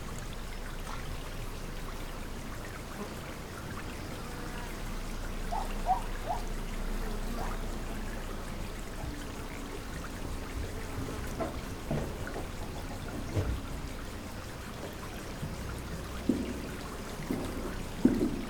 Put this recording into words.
small road in Povoa das Leiras, water is running over the cobblestone road, animals behind the metal doors of the buildings, world listening day